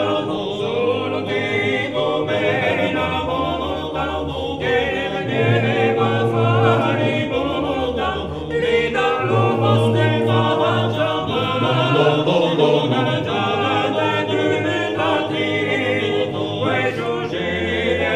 Sant'Olcese GE, Italia - I Giovani Canterini di Sant'Olcese
A session of Trallalero, polyphonic chant from Genoa, played by I Giovani Canterini di SantOlcese, a trallalero group. Trallalero is a five-voices chant, without instruments.